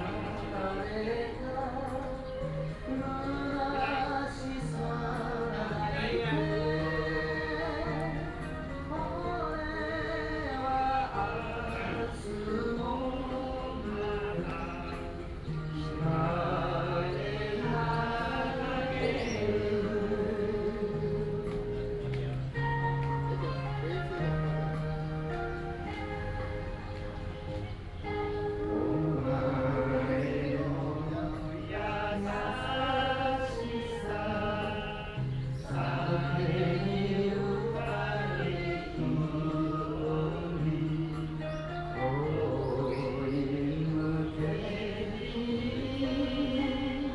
永康公園, Taoyuan City - learning to sing Japanese songs
A group of old people are learning to sing Japanese songs, in the Park, birds sound